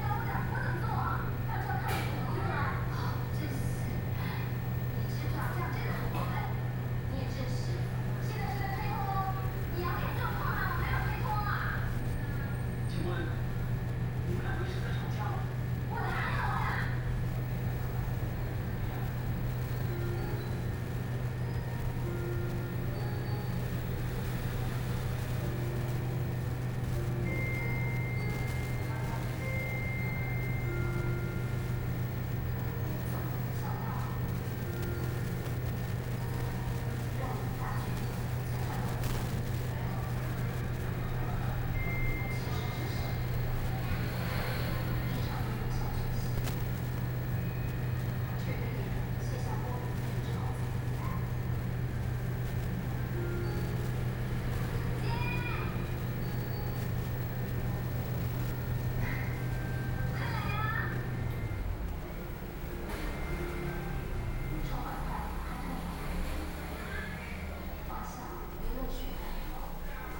In the restaurant, Freezer Noise, Television sound, Sony PCM D50 + Soundman OKM II
Yonghe District, New Taipei City, Taiwan, 7 August 2013